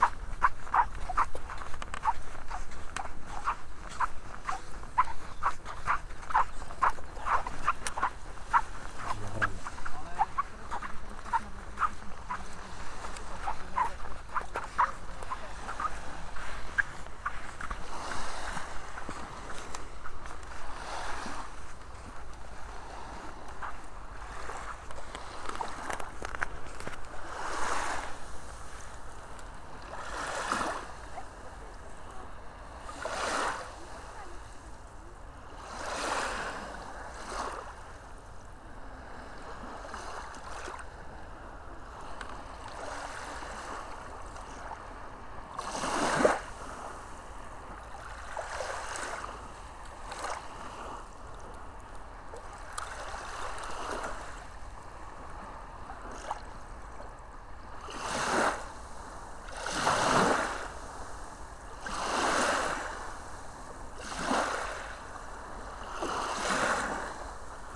Wyspa Sobieszewska, Gdańsk, Poland - Na plaży
Na plaży, chodzenia po piasku i morze.